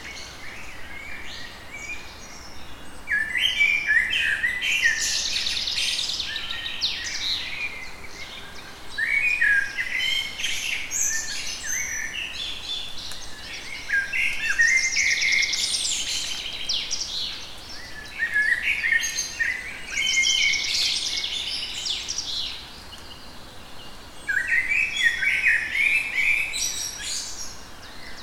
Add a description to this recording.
Birds in the park. Tascam DR-100 (UNI mics)